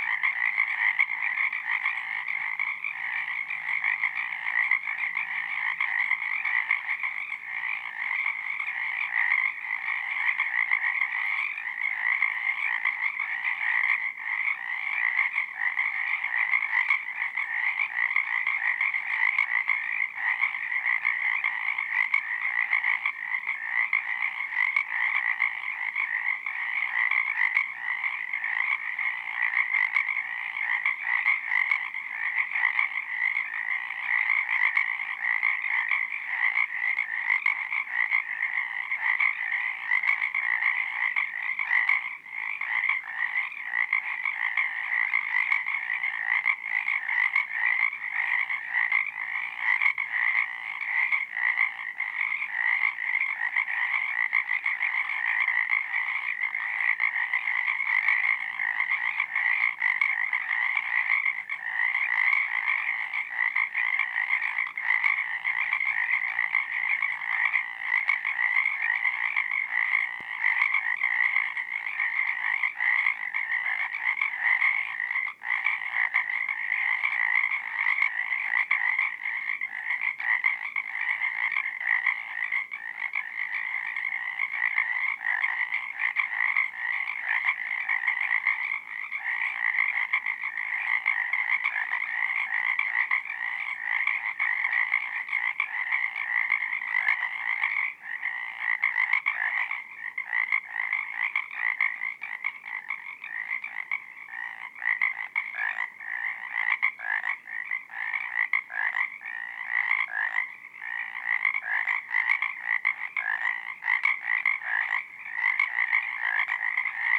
Lone Pine, CA, USA - Frogs on Owen's River Bank
Metabolic Studio Sonic Division Archives:
Recording of Frogs taken at midnight on Owen's River Bank. Recorded on Zoom H4N